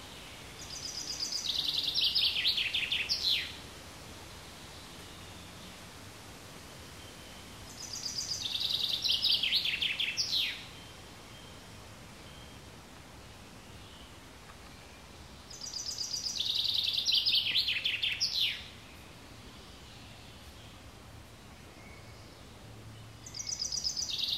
Приокский р-н, Нижний Новгород, Нижегородская обл., Россия - sol 1
Nizhegorodskaya oblast', Russia, 4 June 2016